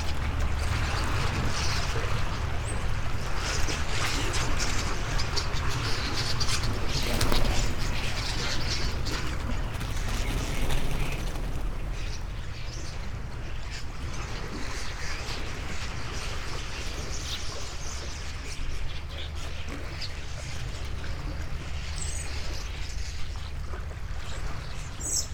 Novigrad, Croatia - under pine trees, birds